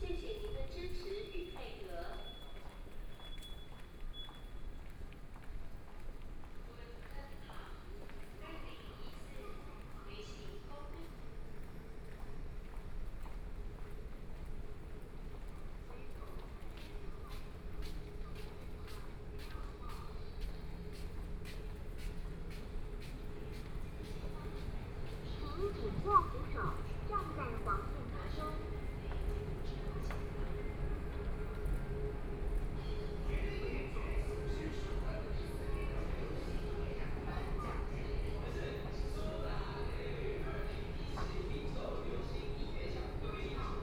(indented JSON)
{
  "title": "Formosa Boulevard Station, Kaohsiung City - Walking in the station",
  "date": "2014-05-14 07:51:00",
  "description": "Walking in the station",
  "latitude": "22.63",
  "longitude": "120.30",
  "altitude": "13",
  "timezone": "Asia/Taipei"
}